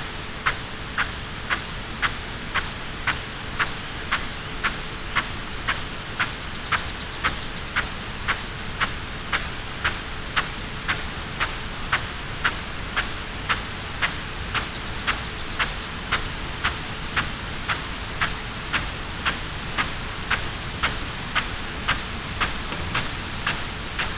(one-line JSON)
{"title": "Green Ln, Malton, UK - field irrigation system ..", "date": "2020-05-25 05:55:00", "description": "field irrigation system ... xlr SASS to Zoom F6 ... a Bauer SR 140 ultra sprinkler to Bauer Rainstart E irrigation system ... SASS on the ground ... the sprinkler system gradually gets pulled back to the unit so it is constantly moving ...", "latitude": "54.12", "longitude": "-0.56", "altitude": "95", "timezone": "Europe/London"}